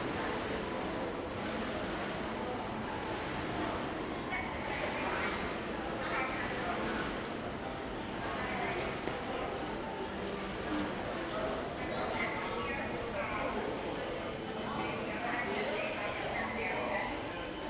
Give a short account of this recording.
NYC, metro station 42th / 7th (times square); entrance hall, pedestrians and piano music, voice from ticket information counter;